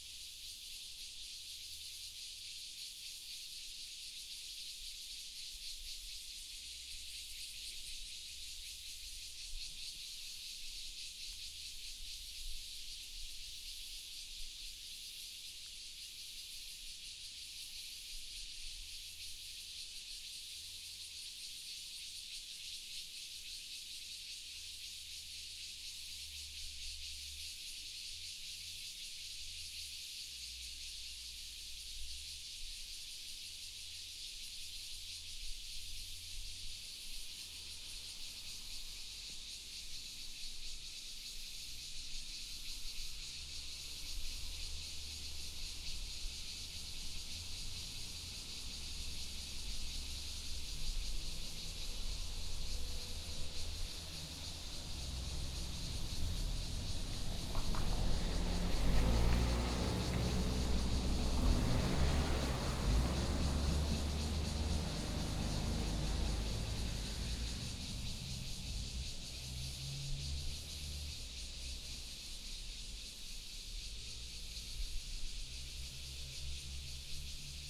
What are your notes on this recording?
Cicada, traffic sound, birds sound